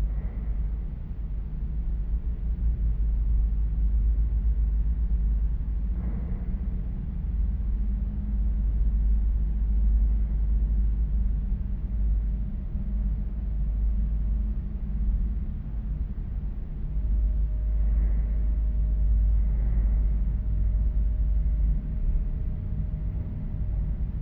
Flingern, Düsseldorf, Deutschland - Düsseldorf, Versöhnungskirche, interior ambience
Inside the church. A chair, footsteps, a door - then the ambience of the empty space with some distant accents.
This recording is part of the intermedia sound art exhibition project - sonic states
soundmap nrw -topographic field recordings, social ambiences and art places